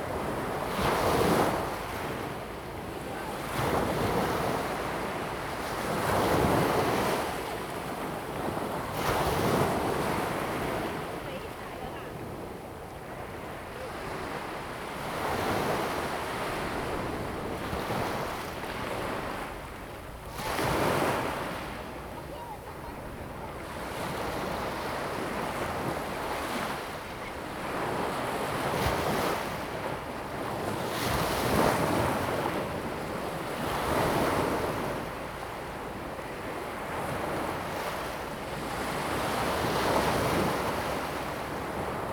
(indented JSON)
{
  "title": "中澳沙灘, Hsiao Liouciou Island - The sound of waves",
  "date": "2014-11-01 16:45:00",
  "description": "Small beach, The sound of waves and tides, Yacht whistle sound\nZoom H2n MS +XY",
  "latitude": "22.35",
  "longitude": "120.39",
  "timezone": "Asia/Taipei"
}